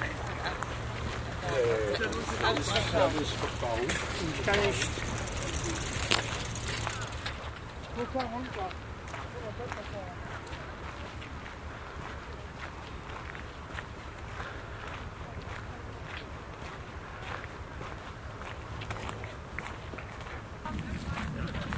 monheim, rheindeich, sonntagsspaziergänger
konversationen flanierender sonntagsspaziergänger
project: :resonanzen - neanderland soundmap nrw: social ambiences/ listen to the people - in & outdoor nearfield recordings